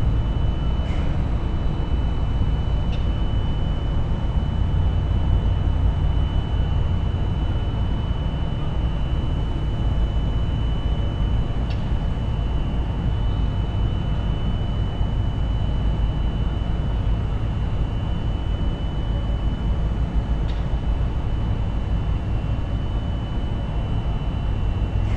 Kidricevo, Slovenia - factory complex ambiance
sitting by railway tracks as darkness fell, watching across the way as a tanker truck emptied its contents at an adjacent building.